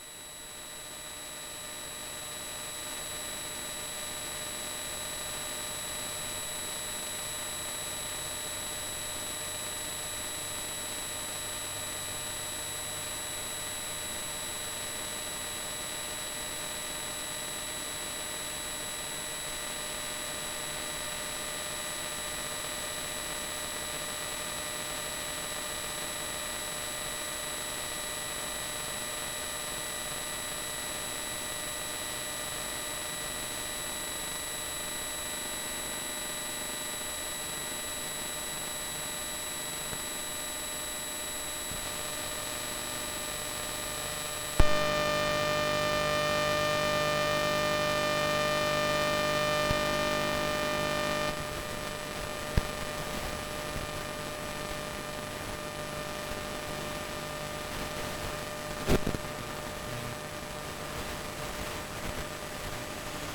Majori, Jurmala, Latvia, train EMF
listening to electric train with Soma "Ether" EMF sensor
Vidzeme, Latvija, 14 August